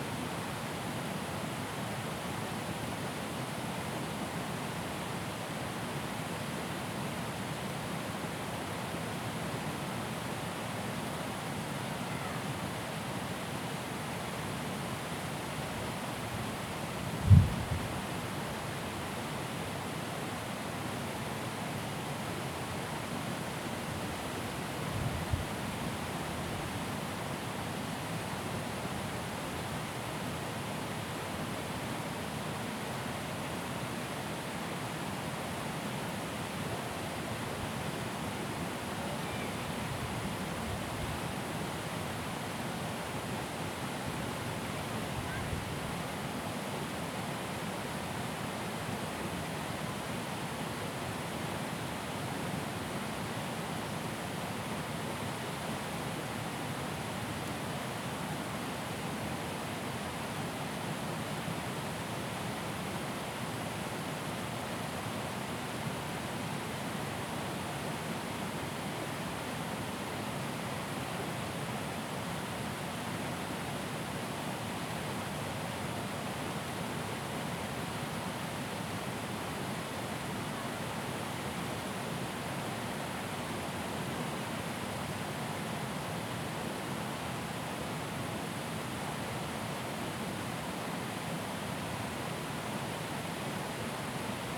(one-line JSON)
{"title": "Castle Park, High Street, Colchester, Colchester, Essex, UK - Colchester Weir", "date": "2014-01-15", "description": "Weir Recording in Colchester, slightly windy on a friday about 3 o clock.", "latitude": "51.89", "longitude": "0.90", "altitude": "9", "timezone": "Europe/London"}